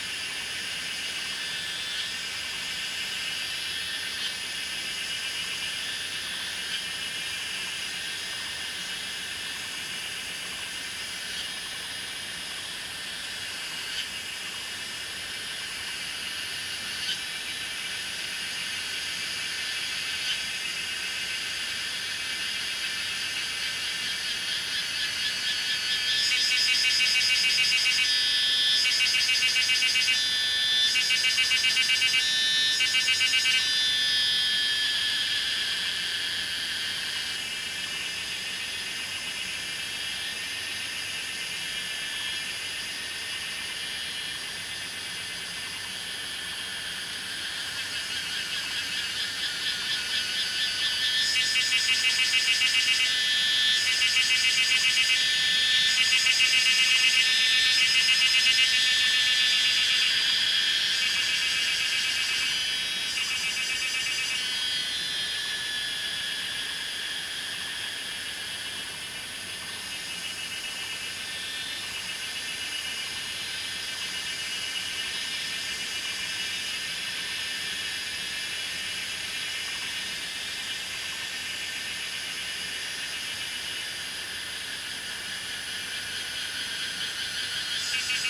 南坑橋, 埔里鎮成功里 - Cicada and Bird sounds
Cicada and Bird sounds
Zoom H2n MS+XY